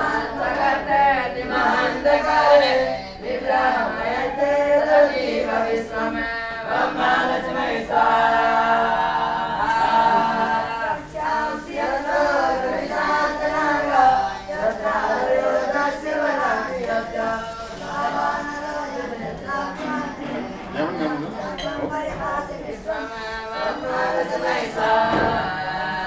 Pashupatinath, Kathmandu, Népal - Chant de femmes